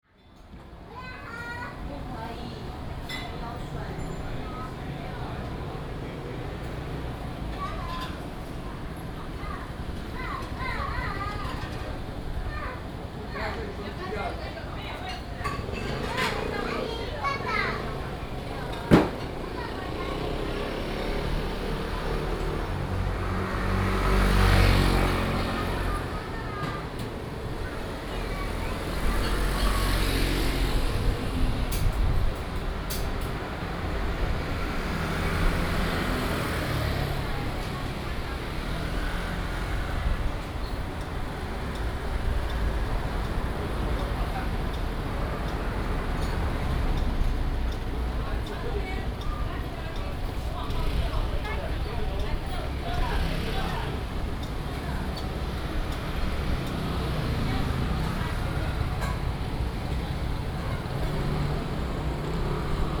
At the roadside, Sound from the Restaurant, Traffic Sound, Very hot weather